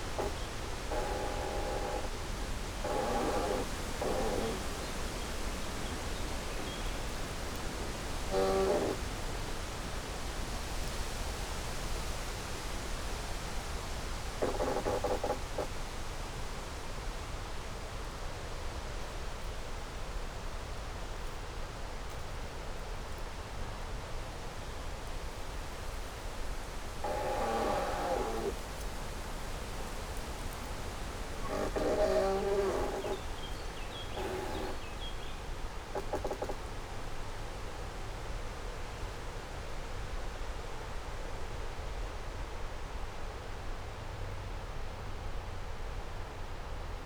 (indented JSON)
{
  "title": "Fen Covert, UK - Ancient wetland wood in a gale; inside and outside a creaky tree",
  "date": "2020-07-05 16:42:00",
  "description": "A snippet from several days of gales. Fen covert is a very atmospheric old wetland wood, left untouched for decades. The birches and alders have fallen, slanted, grown and died into fantastic mossy shapes and sculptures. A dead tree, cracked but still upright leans on another. They move together in the wind. The creak is faintly audible to the ear amongst the hiss and swell of leaves and branches, but very loud and close to the contact mic placed in the trunk. This track is a mix of the outer and inner sounds in sync.",
  "latitude": "52.30",
  "longitude": "1.60",
  "altitude": "10",
  "timezone": "Europe/London"
}